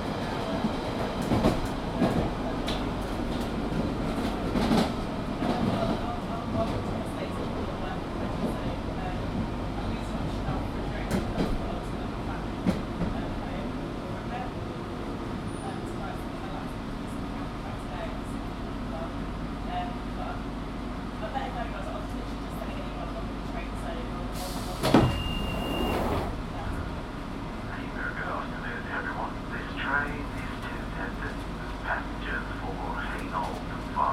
{
  "title": "South Woodford, London, UK - Central Line - South Woodford station to Woodford station.",
  "date": "2012-06-20 15:33:00",
  "description": "Central Line underground train (tube train) travelling between South Woodford station and Woodford station. Driver announcements etc.",
  "latitude": "51.59",
  "longitude": "0.03",
  "altitude": "31",
  "timezone": "Europe/London"
}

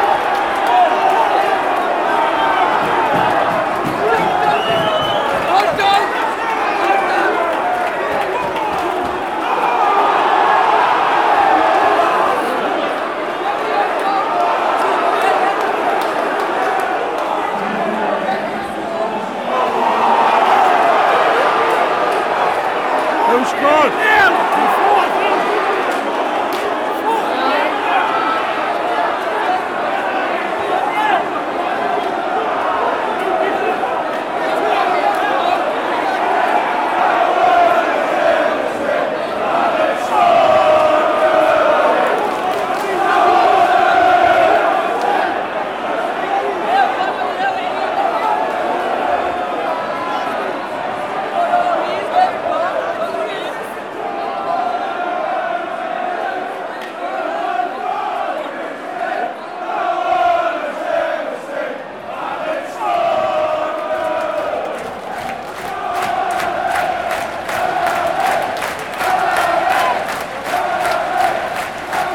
{
  "title": "The Stadium of Light, Sunderland, UK - Matchday. Sunderland AFC",
  "date": "2015-04-05 15:00:00",
  "description": "A short recording of a Saturday afternoon home game at Sunderland Football Club.\nVarious locations in and around the football stadium were used to create this final mix.",
  "latitude": "54.91",
  "longitude": "-1.39",
  "altitude": "30",
  "timezone": "Europe/London"
}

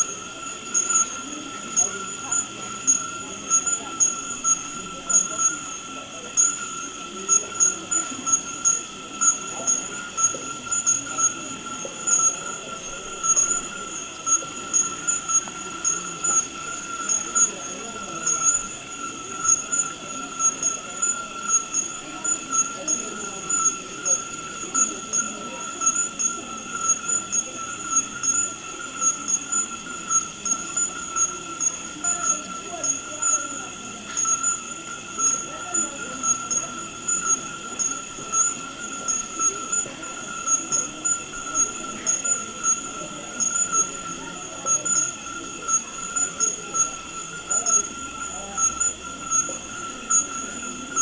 {
  "title": "Unnamed Road, Kpando, Ghana - little bush near market with tree frogs",
  "date": "2004-06-26 16:19:00",
  "description": "little bush near market with tree rogs",
  "latitude": "6.99",
  "longitude": "0.29",
  "altitude": "153",
  "timezone": "Africa/Accra"
}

